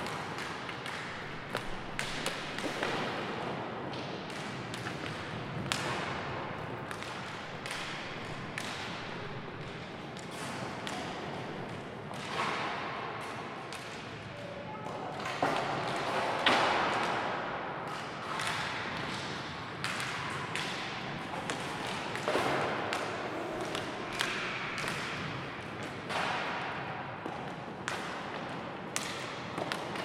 stereo recording using an H4 zoom recorder, made inside a hockey rink, during the warm-up period.
Vila Nova de Gaia, Porto, Portugal, 20 February 2022